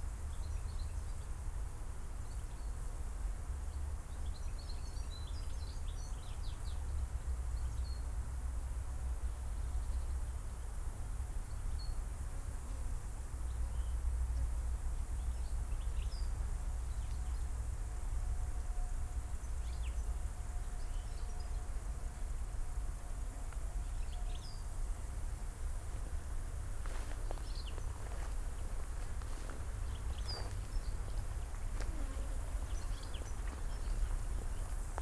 Tempelhofer Feld, Berlin, Deutschland - quiet summer morning with helicopter
revisiting the poplar trees on former Tempelhof airfield. hot and quiet summer morning, crickets in the high grass, no wind, the slowly increasing deep drone of an approaching helicopter hits my microphones.
(Sony PCM D50, DPA4060)
27 July 2013, 09:50